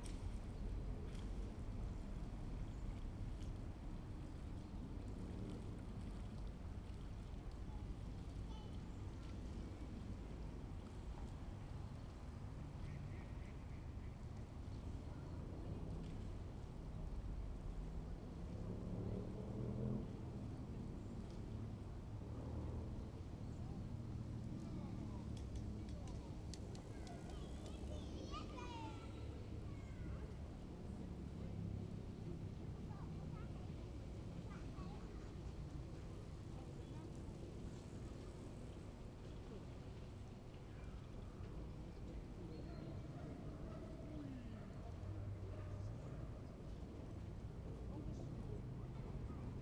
Berlin Wall of Sound, Schlosspark Babelsberg, Lankestrasse 120909
Potsdam, Germany